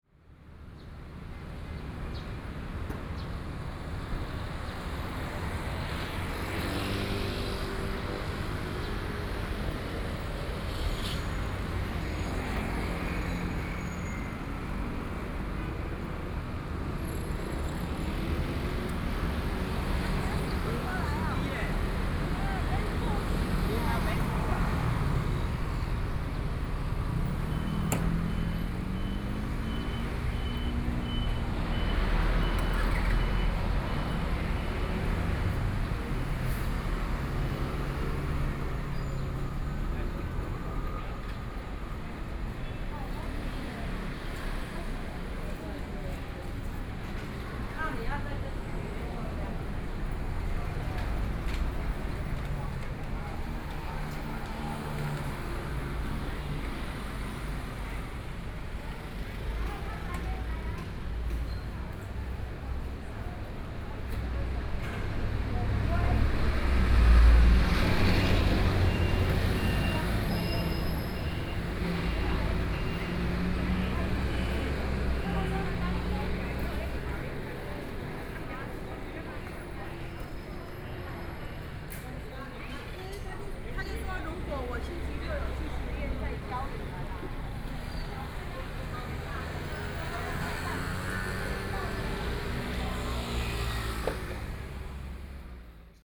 Erling Rd., Xiaogang Dist. - on the Road
Traffic Sound, Traditional Market